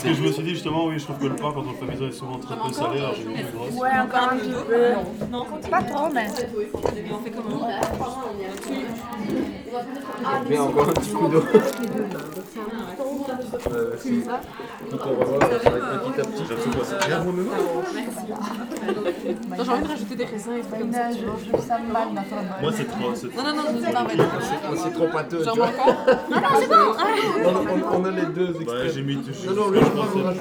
L'Hocaille, Ottignies-Louvain-la-Neuve, Belgique - KAP Le Levant
The workshop is near to the end. People are kneading the bread and everybody is happy. As this is friendly, ambience is very noisy ! After kneading, students will go back home and they will be able to bake it. Persons with down syndrome taught perfectly students.
Ottignies-Louvain-la-Neuve, Belgium, 24 March 2016